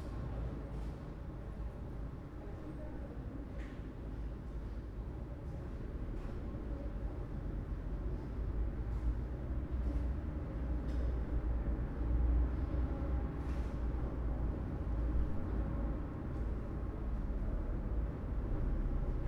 Prague, Czech Republic - Pedestrian Tunnel from Žižkov to Karlín
recorded as part of Radio Spaces workshop in Prague